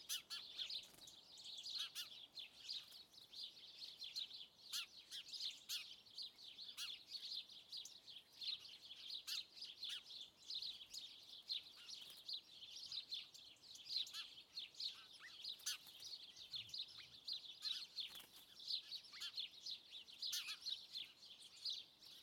Lisburn, Reino Unido - Derriaghy Dawn-03
Field Recordings taken during the sunrising of June the 22nd on a rural area around Derriaghy, Northern Ireland
Zoom H2n on XY
Lisburn, UK, 22 June 2014